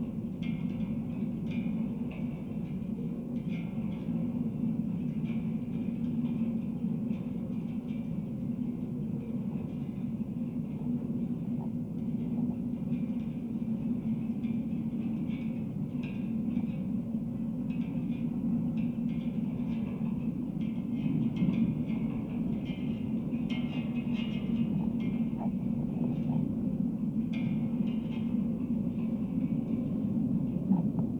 Isle of Islay, UK - wind in the wires
A pair of contact mics (to Olympus LS 14) secured to the fence line of Gruinart Reserve on a late May evening.
22 May 2018